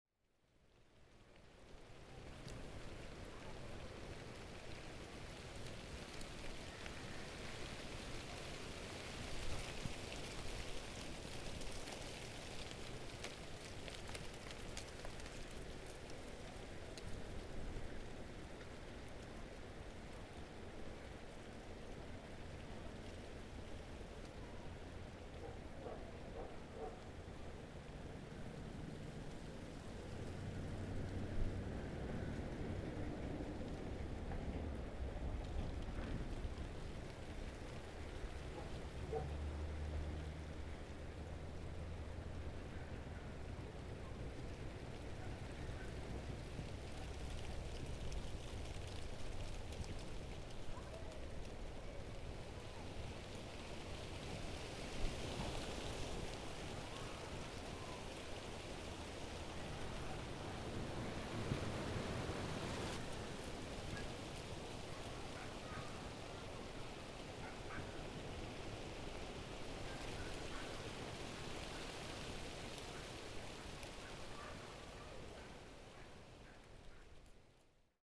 Horsemens Campground, Lost Bridge West State Recreation Area, Andrews, IN, USA - Under a cottonwood tree, Horsemens Campground, Lost Bridge West State Recreation Area, Andrews, IN 46702, USA
Wind blowing through a cottonwood tree, Horsemen's Campground, Lost Bridge West State Recreation Area, Andrews, IN 46702, USA